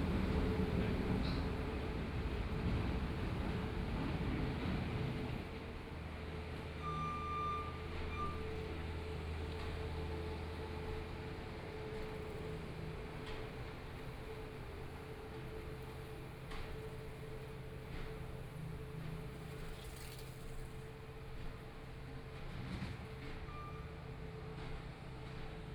Baosang Rd., Taitung City - Road Construction
Road construction noise, Binaural recordings, Zoom H4n+ Soundman OKM II ( SoundMap2014016 -10)